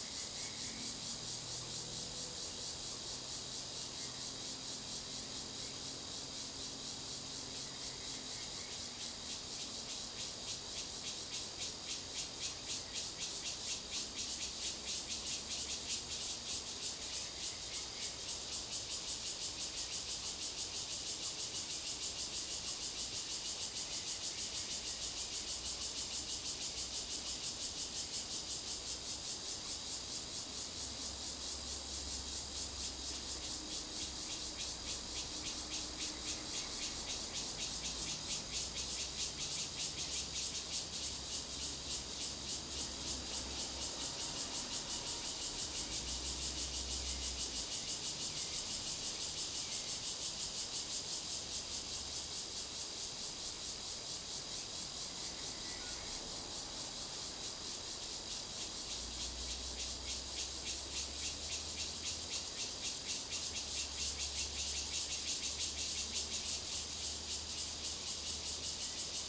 {"title": "TaoMi Village, Nantou County - Cicadas cry", "date": "2015-08-26 10:04:00", "description": "Birds singing, Cicadas cry, Frog calls\nZoom H2n MS+XY", "latitude": "23.94", "longitude": "120.92", "altitude": "503", "timezone": "Asia/Taipei"}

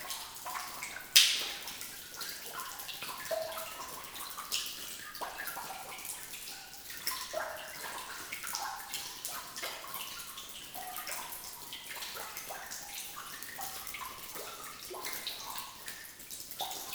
{"title": "Montagnole, France - Quiet atmosphere into the underground mine", "date": "2017-06-06 07:50:00", "description": "Into an underground cement mine, drops are falling into a large lake. It makes a quiet and pleasant sound, with a few reverb as it's a quite big room.", "latitude": "45.53", "longitude": "5.92", "altitude": "542", "timezone": "Europe/Paris"}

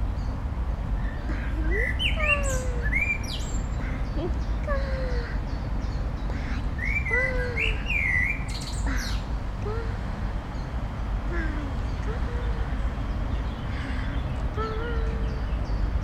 {"title": "Prague, Czech Republic - children playground Na výtoni", "date": "2012-08-17 10:39:00", "description": "Black bird, singing with my daughter and sound of street traffic", "latitude": "50.07", "longitude": "14.42", "altitude": "200", "timezone": "Europe/Prague"}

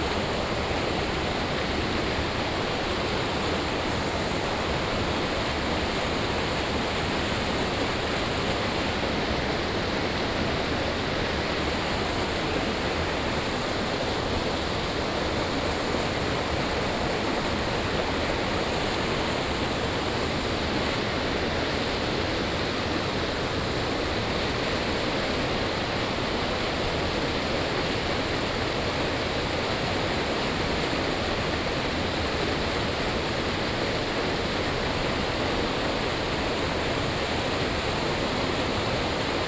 {"title": "玉門關, 埔里鎮成功里, Nantou County - Stream sound", "date": "2016-03-26 12:02:00", "description": "Stream sound\nBinaural recordings\nSony PCM D100+ Soundman OKM II", "latitude": "23.96", "longitude": "120.89", "altitude": "420", "timezone": "Asia/Taipei"}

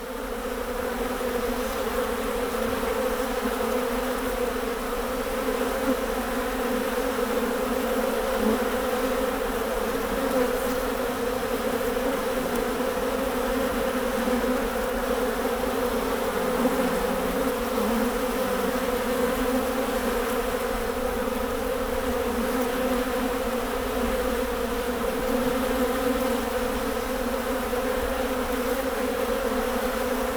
{"title": "serching for honey rec. by Jean Francois Cavro", "latitude": "47.24", "longitude": "-1.66", "altitude": "54", "timezone": "GMT+1"}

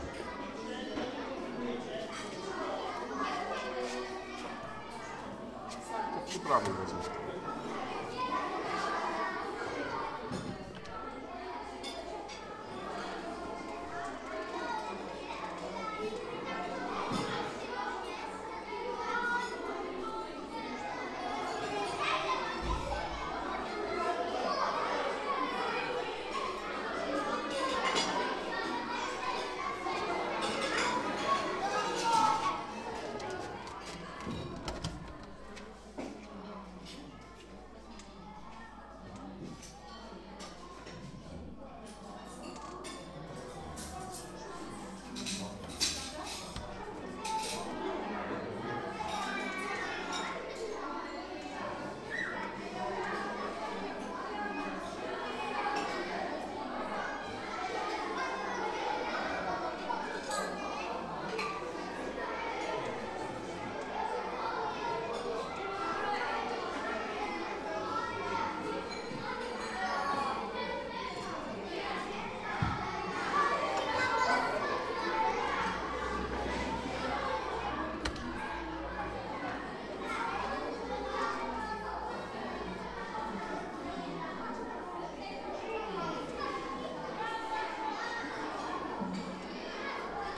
{"title": "Gmina Strzelce Krajeńskie, Polen - Refectory", "date": "2013-08-08 15:20:00", "description": "two school classes at work. A most beautiful choir.", "latitude": "52.91", "longitude": "15.66", "altitude": "61", "timezone": "Europe/Warsaw"}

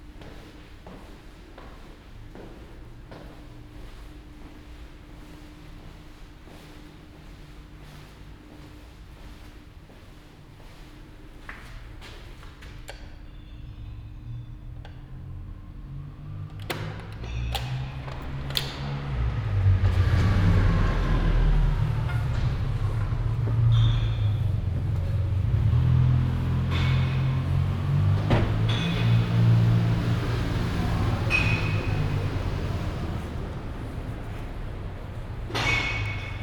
{"title": "Walk around Molo dei Bersaglieri, Trieste TS, Italia - Trieste 1st of the year 2022: soundwalk", "date": "2022-01-01 14:15:00", "description": "Trieste 1st of the year 2022: soundwalk\nSaturday January 1st, 2022, walking in the centre town, on the pier, in and around Piazza Unità d'Italia.\nStart at 2:15 p.m. end at 3:27 p.m. duration of recording 1h'12’21”\nThe entire path is associated with a synchronized GPS track recorded in the (kmz, kml, gpx) files downloadable here:", "latitude": "45.65", "longitude": "13.76", "altitude": "1", "timezone": "Europe/Rome"}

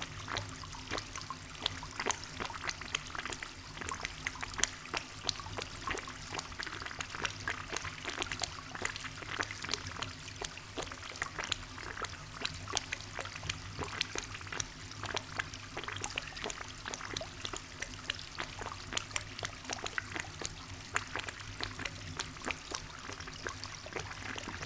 {"title": "Thionville, France - Victor mine", "date": "2016-10-30 11:00:00", "description": "In the main tunnel of the very old mine called Victor, a tube makes strange sounds with water flowing from a small hole.", "latitude": "49.35", "longitude": "6.07", "altitude": "272", "timezone": "Europe/Paris"}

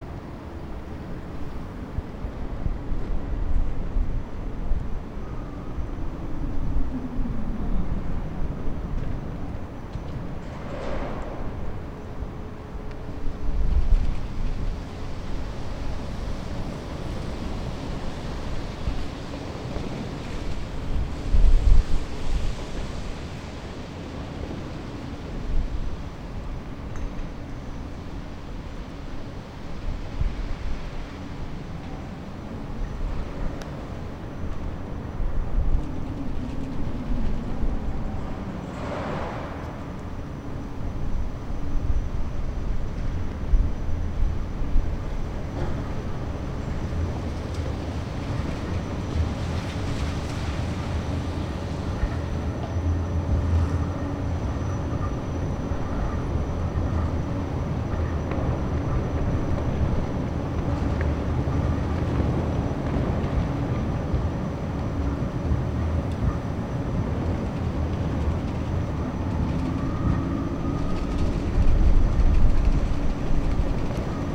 {"title": "Stortorget, Oslo - stortorget", "date": "2009-06-01 09:25:00", "description": "Stortorget, Sunday morning in January.", "latitude": "59.91", "longitude": "10.75", "altitude": "27", "timezone": "Europe/Oslo"}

